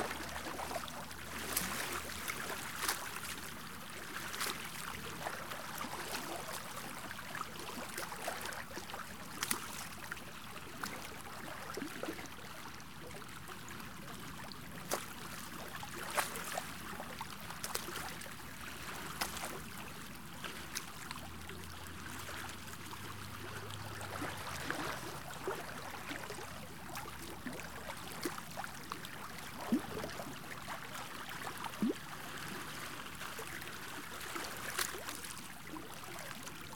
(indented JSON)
{
  "title": "Detroit, MI, USA - Soothing Waves on Belle Isle Beach",
  "date": "2013-04-19 10:30:00",
  "description": "This clip is a straight-through take of soothing waves washing ashore at Detroit's Belle Isle park. This approach is designed to present the field recording in its original, sparkling audiophile quality. I am lucky enough to currently have access to the amazing CROWN SASS stereo mic, which captures great audio motion as your subject (here its waves and light boating ambiance)moves from one channel to the other. This recording was made on the far tip of Belle Isle that points out towards Lake St. Clair, with the Crown MIC secured just 1-2 feet away from the water's edge. Wind screen and low cut were utilized to reduce wind noise. Was an ideal recording day, good warm weather with relatively little wind or airplane interference.",
  "latitude": "42.35",
  "longitude": "-82.95",
  "altitude": "180",
  "timezone": "America/Detroit"
}